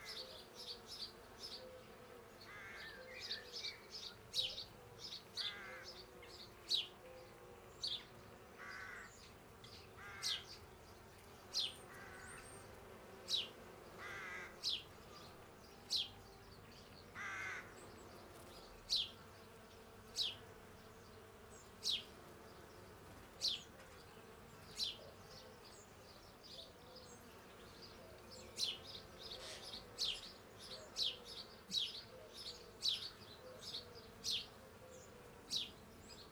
Hesket Newmarket - Bees in June
Recorder placed in garden tree in amongst bees. Birds in the background.
North West England, England, United Kingdom, 16 June 2022